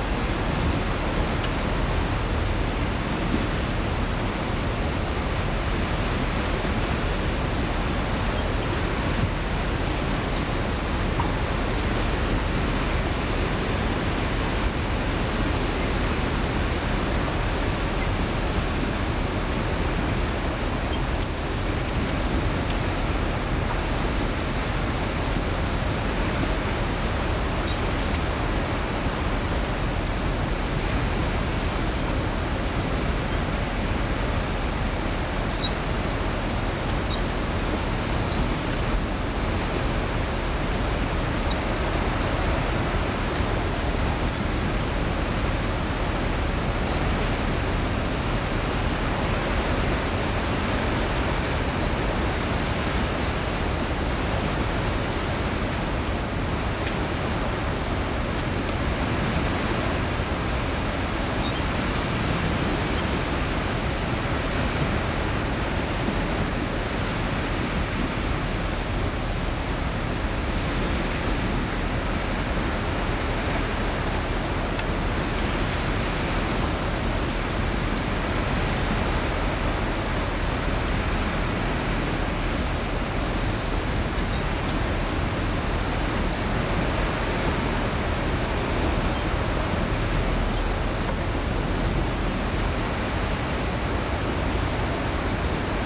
Hunsballevej, Struer, Danmark - Struer Beach heavy wind, distant sea.
Struer_Beach heavy wind, distant sea. Recorded with Rode NT-SF1 Ambisonic Microphone. Øivind Weingaarde.
30 September 2022, ~17:00